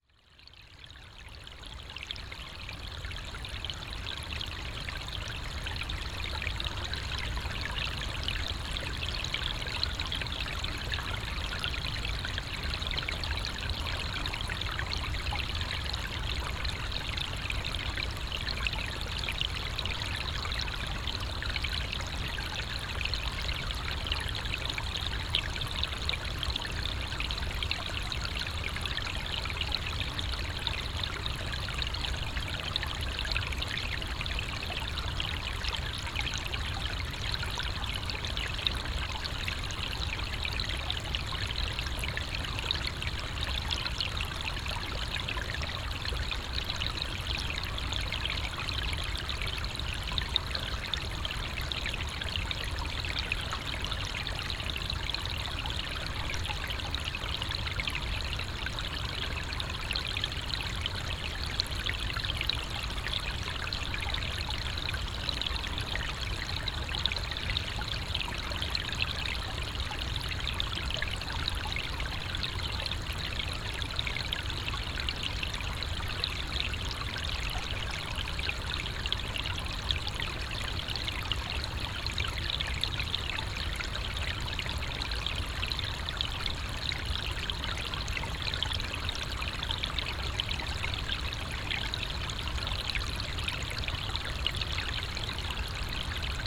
Grybeliai, Lithuania, beavers dam
Underwater microphone under the frozen beavers dam